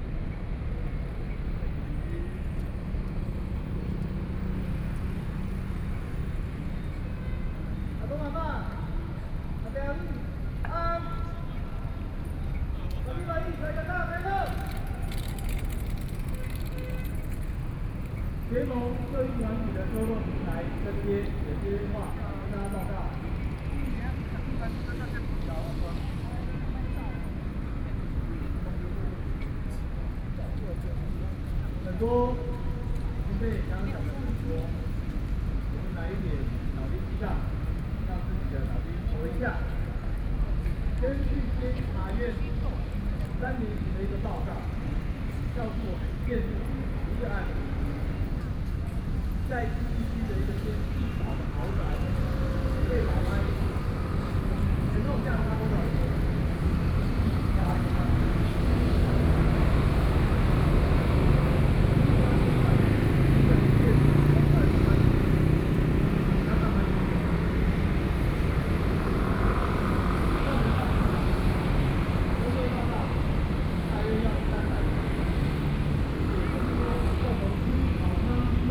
Zhongshan S. Rd., Zhongzheng Dist., Taipei City - Protest
Civic groups are speeches, Traffic Noise, Sony PCM D50 + Soundman OKM II
中正區 (Zhongzheng), 台北市 (Taipei City), 中華民國, 18 August 2013